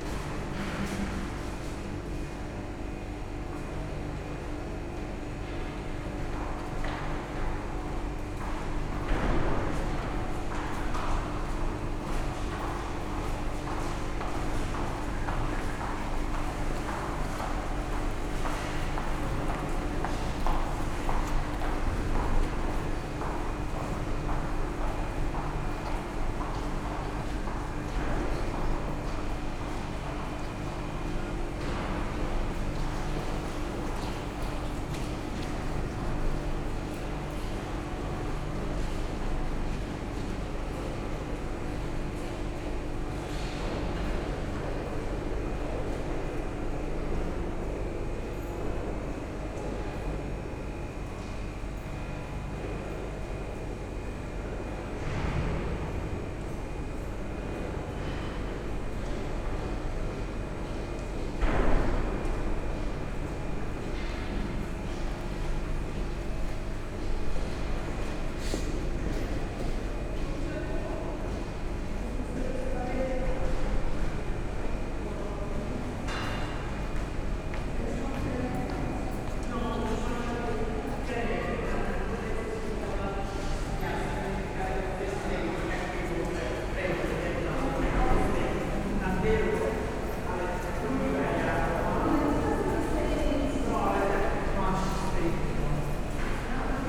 passage ambience, short walk
(SD702, DPA4060)
Prague-Prague, Czech Republic, 4 October 2012